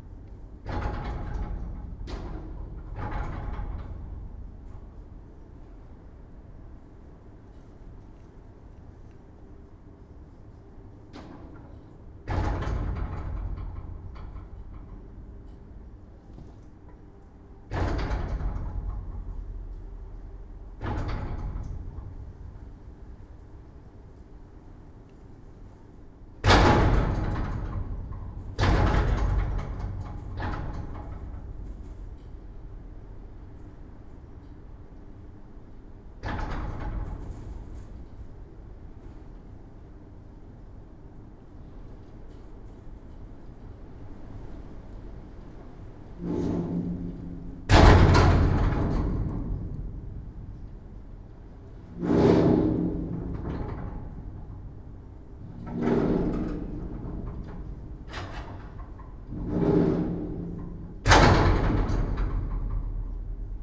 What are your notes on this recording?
In an abandoned coke plant, every landscape is extremely glaucous. Today its raining and theres a lot of wind. A semi-destroyed metallic door slams in the squall.